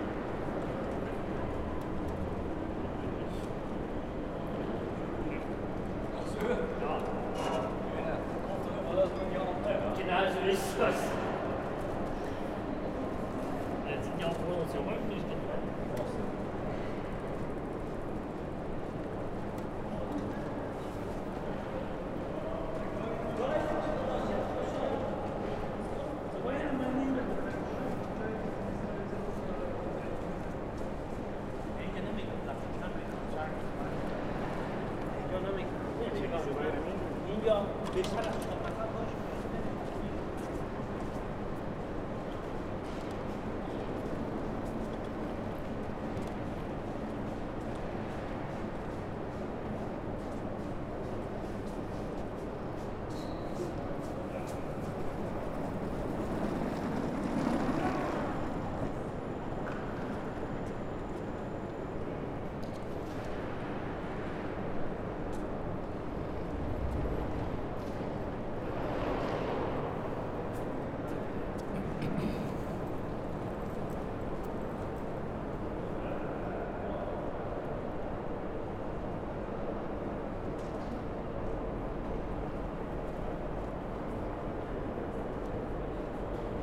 B-Ebene, Am Hauptbahnhof, Frankfurt am Main, Deutschland - Entrance of the Station in Corona Times
The recording is made in the entrance hall of the main station next to the doors through which the passengers enter the station. Very quiet.